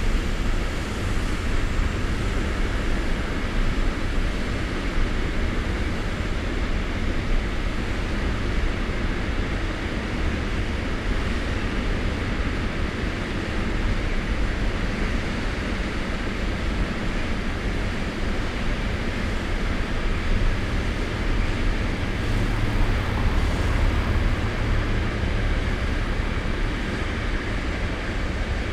Köln, Schmalbeinstr. night ambience, trains of all sort pass here at night, but the cargo trains are most audible because of their low speed and squeaky brakes. it's the typical night sound in this area
(Sony PCM D50, DPA4060)
Deutschland, European Union, 18 July 2013